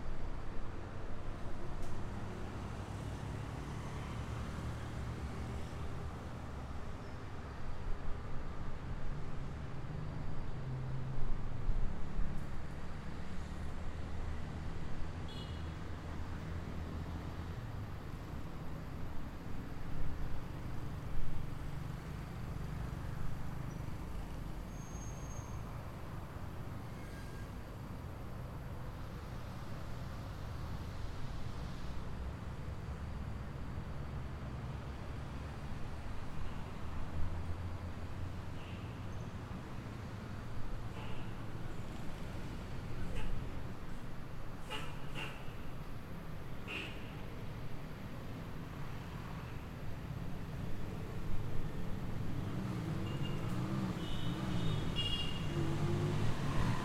Cra., Medellín, Belén, Medellín, Antioquia, Colombia - Parqueadero de noche
Los grillos con los carros son los sonidos mas permanetes, en este de brea y saflato mojados por la lluvia, por lo cual se siente mucha calama en la compocion.